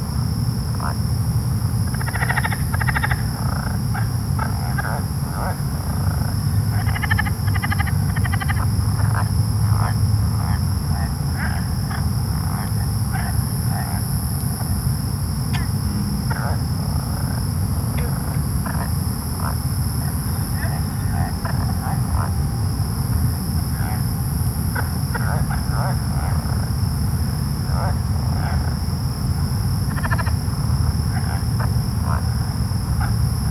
One of my favorite places in Houston. Been coming here since I was a child, doing my best to escape the city; always marveling at the dense blend of natural and urban sounds. Sometimes it sounds like a battle, other times harmonious. I went to this place looking for what I think is the pulse of my city for The Noise Project (way beyond the agreed upon time frame to post submissions!). Note the brown trees. They have all since died and fallen after several years of hard drought. It looks and sounds much different now...
CA-14 omnis (spaced)> Sony PCM D50
Harris County, Texas, United States of America, 22 March 2013